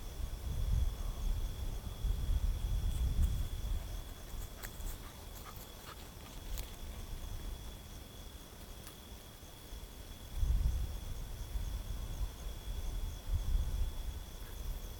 Night promenade field recording
Unpaved gravel road (plus eventual dog)
Zoomh1 + Soundman – OKM II Classic Studio Binaural